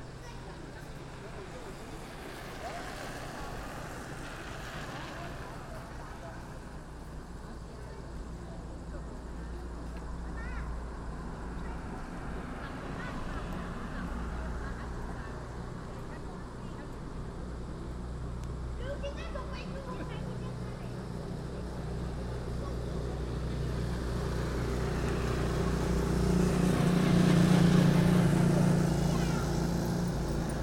Baisha, Hainan, China - Heading into Baisha town on a Sunday evening
Evening on a street corner in Baisha Town, Baisha Li Minority County. Standing opposite a Chinese Dream sign, as people pass by on electric and petrol motorbikes and trikes
Baisha Lizuzizhixian, Hainan Sheng, China, 9 April 2017